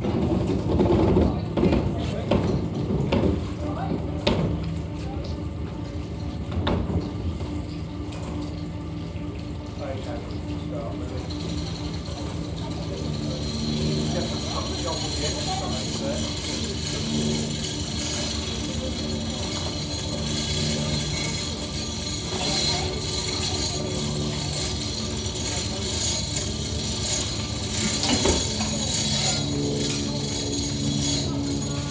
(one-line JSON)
{"title": "Gravesend Marina, UK - Boat Lift at Gravesend Marina", "date": "2021-06-12 17:00:00", "description": "Sailing boat hoist lifting boats from River Thames into Gravesend Sailing Club at low tide.", "latitude": "51.44", "longitude": "0.38", "altitude": "4", "timezone": "Europe/London"}